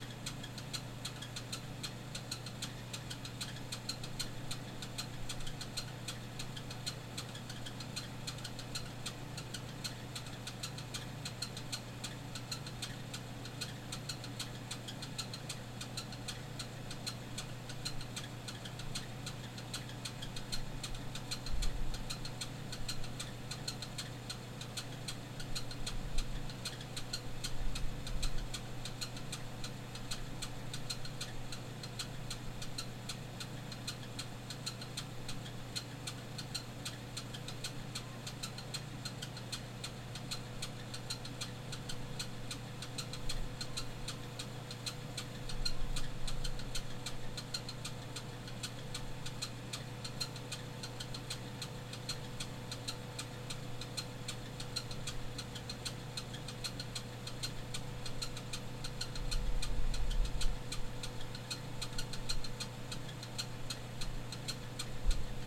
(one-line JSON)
{"title": "Alleyway in Athens, GA USA - HVAC wit a beat", "date": "2018-08-17 08:38:00", "description": "This is a hip HVAC unit in an alleyway behind three businesses.", "latitude": "33.94", "longitude": "-83.39", "altitude": "235", "timezone": "GMT+1"}